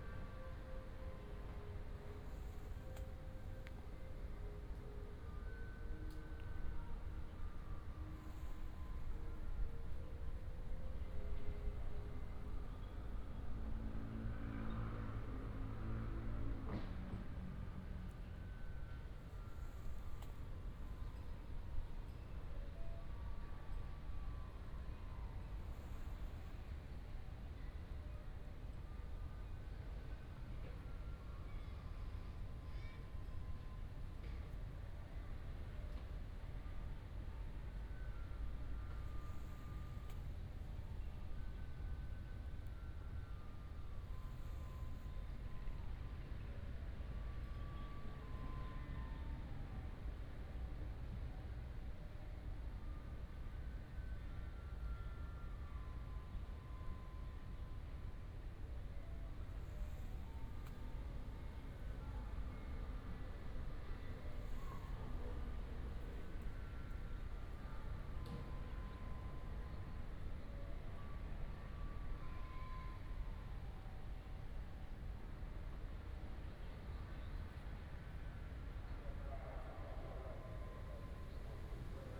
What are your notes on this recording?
The town's noon time, The school bell, rubbish truck, Environmental sound